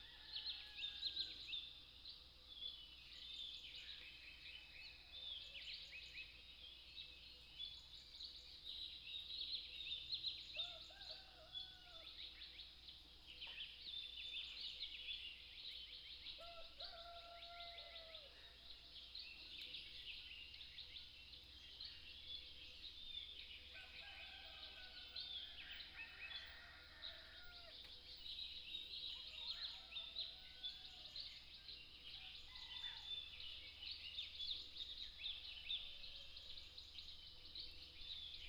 綠屋民宿, 埔里鎮桃米里 - Early morning
Early morning, Birdsong, Chicken sounds, Dogs barking, at the Hostel
Puli Township, Nantou County, Taiwan, 29 April 2015, ~05:00